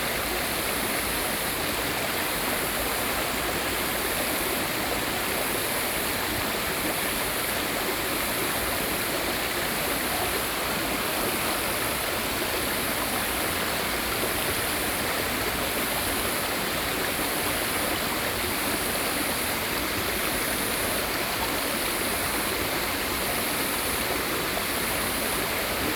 Balian River., Xizhi Dist. - Stream of sound
Stream of sound
Sony PCD D50
Xizhi District, New Taipei City, Taiwan, 16 July